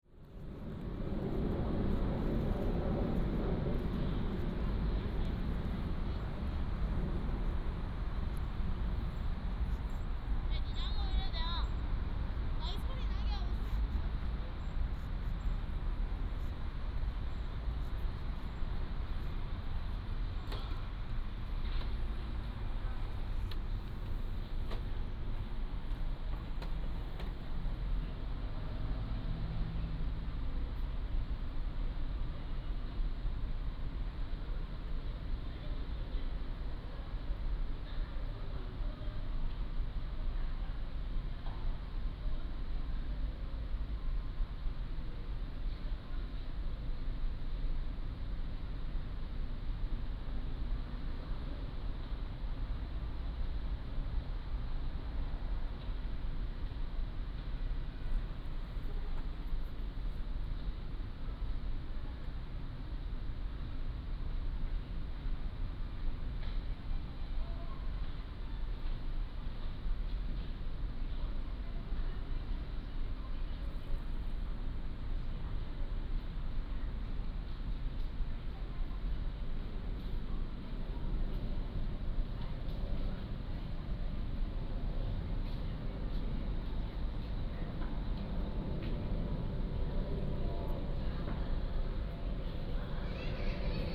The Plaza, Aircraft flying through
Gyeongsangnam-do, South Korea